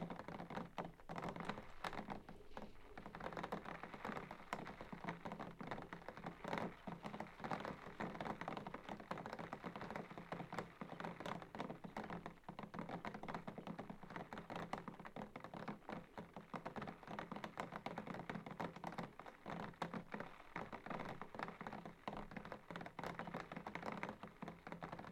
{
  "title": "Troon, Camborne, Cornwall, UK - Rain On The Window From Inside",
  "date": "2015-08-05 02:00:00",
  "description": "Recorded inside, this is the rain hitting the window. Recorded using DPA4060 microphones and a Tascam DR100.",
  "latitude": "50.20",
  "longitude": "-5.28",
  "altitude": "168",
  "timezone": "Europe/London"
}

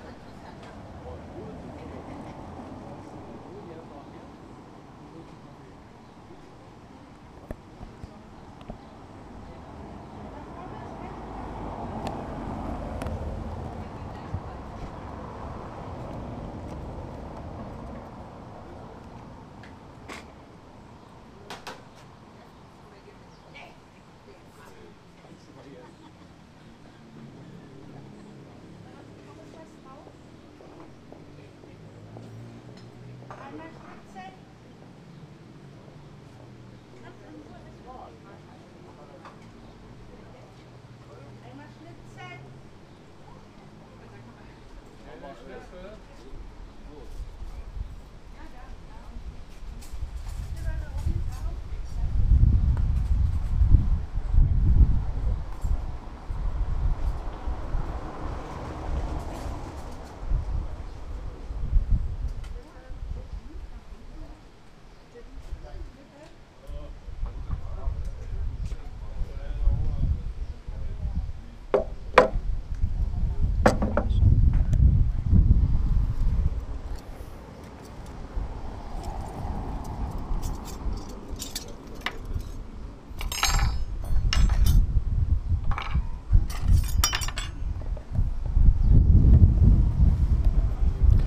{
  "title": "Buckow (Märkische Schweiz), Deutschland - Having theirs",
  "date": "2013-06-30 14:30:00",
  "description": "Whilst we had our coffe & cake, the two drunkards behind us had theirs. All in lovely sunshine, after a beautiful trip around the Märkische Schweiz.",
  "latitude": "52.57",
  "longitude": "14.07",
  "altitude": "27",
  "timezone": "Europe/Berlin"
}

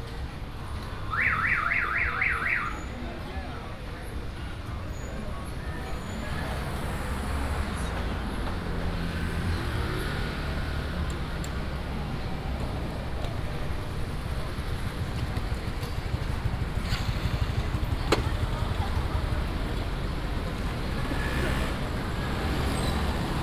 {
  "title": "新一點利黃昏市場, Beitun Dist., Taichung City - dusk market",
  "date": "2017-11-01 15:37:00",
  "description": "Walking through the dusk market, Air conditioning noise, Binaural recordings, Sony PCM D100+ Soundman OKM II",
  "latitude": "24.18",
  "longitude": "120.70",
  "altitude": "134",
  "timezone": "Asia/Taipei"
}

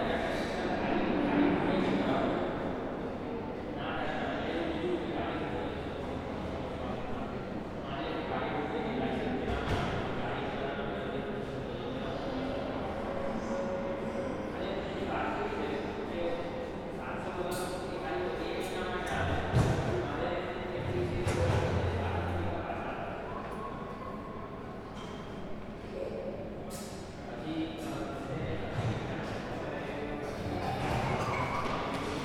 {
  "title": "neoscenes: St. James metro ambience",
  "latitude": "-33.87",
  "longitude": "151.21",
  "altitude": "55",
  "timezone": "Australia/NSW"
}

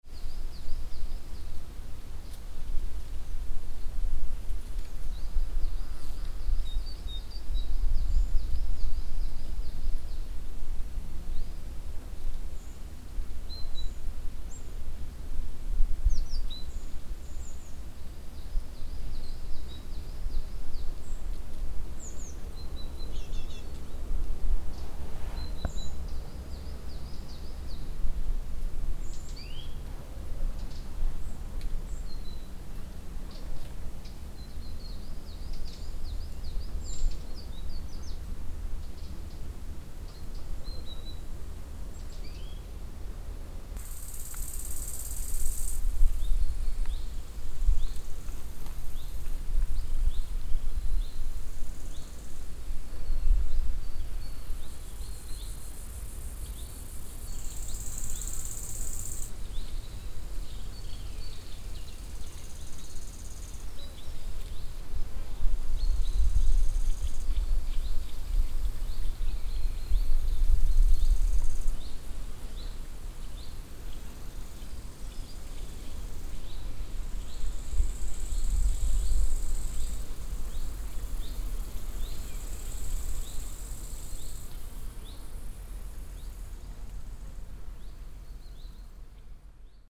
{
  "title": "waldberg, forest meadow",
  "date": "2011-09-17 19:04:00",
  "description": "Walking through the broadleaf forest. The sound of different insects. At a meadow cicades singing in the afternoon sun.\nWaldberg, Waldwiese\nDurch einen Laubwald laufend. Das Geräusch von verschiedenen Insekten. Auf einer Wiese singen Zikaden in der Nachmittagssonne.\nWaldberg, prairie en forêt\nMarche à travers une forêt de feuillus. Le son de divers insectes. Les cigales qui chantent sur une prairie dans le soleil de l’après-midi",
  "latitude": "50.04",
  "longitude": "6.11",
  "altitude": "383",
  "timezone": "Europe/Luxembourg"
}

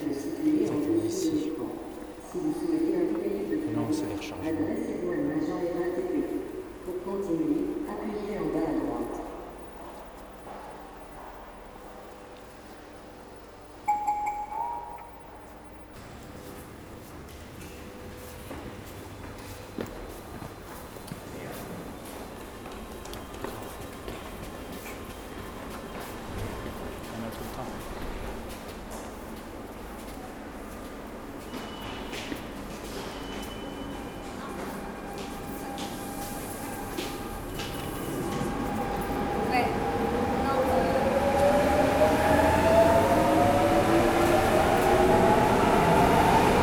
{
  "title": "Chatou, France - Taking the train in Chatou station",
  "date": "2016-09-23 12:25:00",
  "description": "Taking the train in the Chatou station. A group of young students jokes with a bottle of water.",
  "latitude": "48.89",
  "longitude": "2.16",
  "altitude": "37",
  "timezone": "Europe/Paris"
}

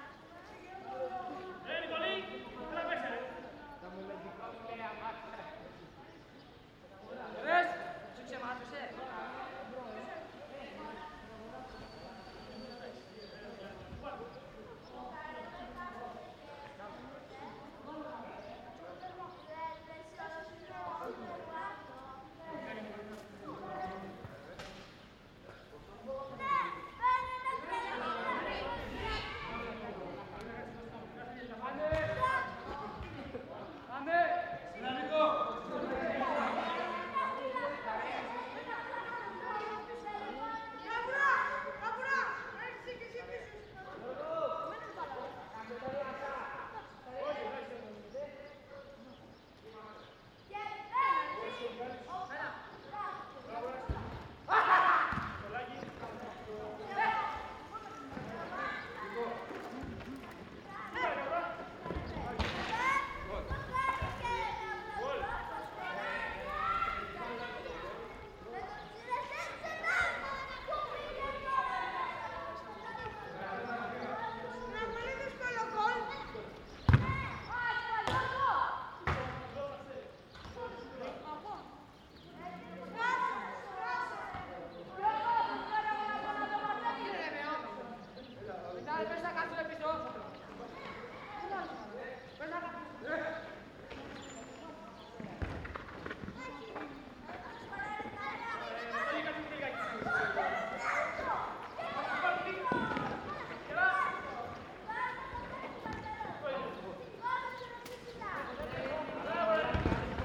{
  "title": "Fourni, Griechenland - Schulhof",
  "date": "2003-05-09 15:02:00",
  "description": "Kinder spielen Fussball. Die Insel ist Autofrei.\nMai 2003",
  "latitude": "37.58",
  "longitude": "26.48",
  "altitude": "17",
  "timezone": "Europe/Athens"
}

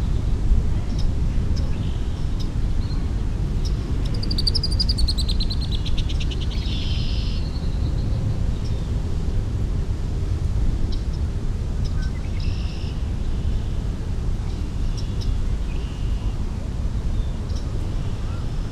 {
  "title": "Villeray—Saint-Michel—Parc-Extension, Montreal, QC, Canada - Parc Jarry",
  "date": "2022-05-20 10:03:00",
  "description": "Recorded with Usi Pro at Parc Jarry with Zoom F3",
  "latitude": "45.54",
  "longitude": "-73.63",
  "altitude": "51",
  "timezone": "America/Toronto"
}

